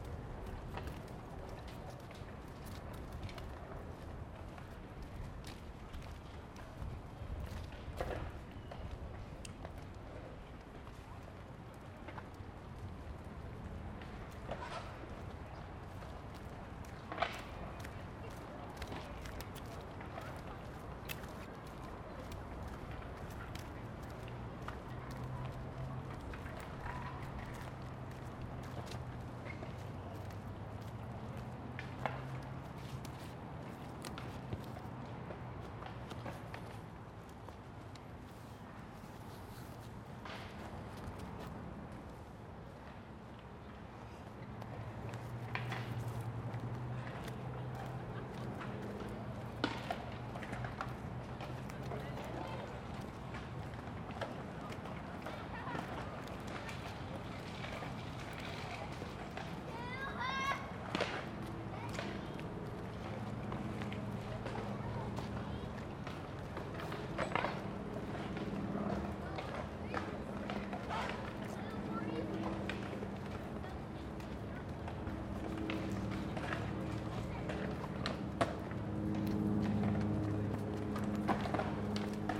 Woodland Park, Seattle WA
Part three of soundwalk in Woodland Park for World Listening Day in Seattle Washington.
Seattle, WA, USA